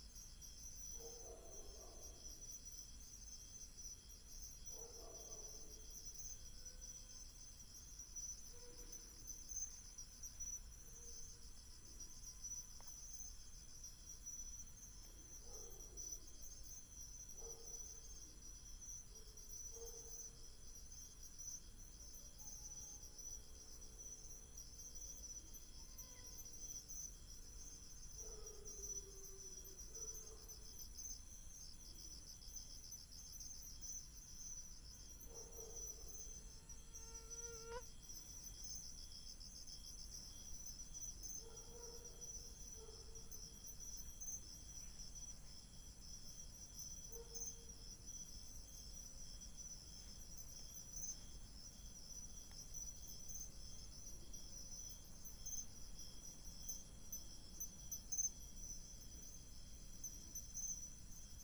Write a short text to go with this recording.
Insects, Binaural recordings, Sony PCM D100+ Soundman OKM II